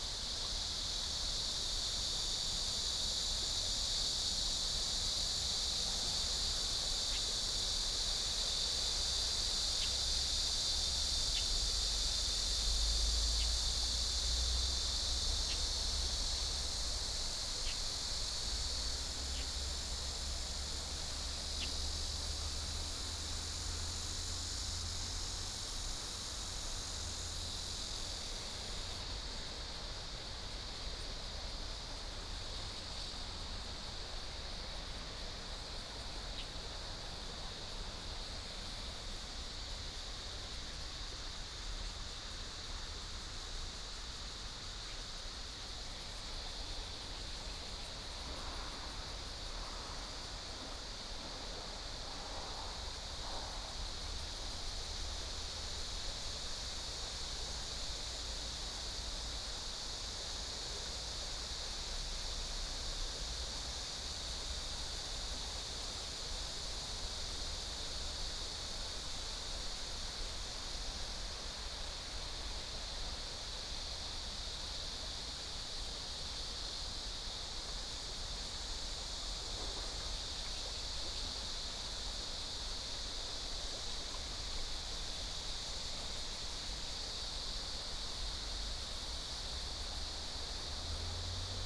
新街溪, Dayuan Dist. - On the river bank
On the river bank, Stream sound, Birds sound, Cicada cry, traffic sound, The plane flew through